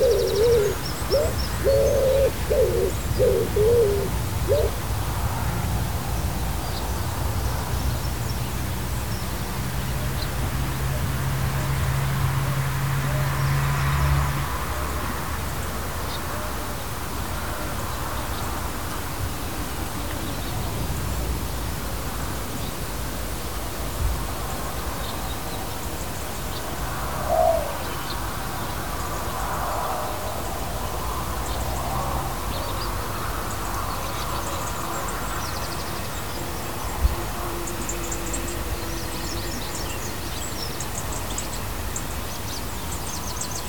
Maybe some sheep and bees
Tascam DR40, built-in mics